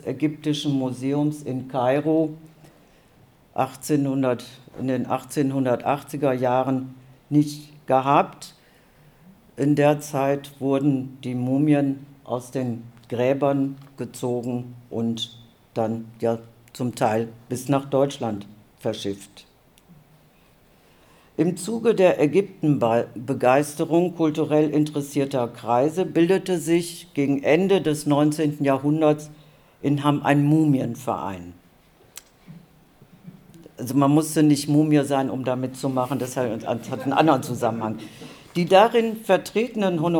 Conference Room, Technisches Rathaus, Townhall, Hamm, Germany - colonial traces in Hamm the mummy club
Der Sitzungssaal im Technischen Rathaus ist nach Corona-Standarts voll besetzt. Die Museumsarchivarin, Maria Perrefort, hat die Geschichte des Hammer Mumienvereins recherchiert und berichtet mit einigen eindruecklichen Zitaten aus der Zeit. Es geht um Spuren des Kolonialismus in Hamm. In der allerersten Veranstaltung dieser Art werden einige solcher Spuren zusammengetragen, gesichert, diskutiert. Was koennten weitere Schritte in dieser Spurensuche und Aufarbeitung sein?
The boardroom in the Technical Town Hall is full to Corona Law standards. Museum archivist, Maria Perrefort, has researched the history of the Mummy Society in Hamm and reports back with some thought-provoking quotes from the time. The evening's topic is traces of colonialism in Hamm. In the very first event of this kind, some such traces are collected, secured, discussed. What could be further steps in this search for traces and reappraisal?
For info to the event, see also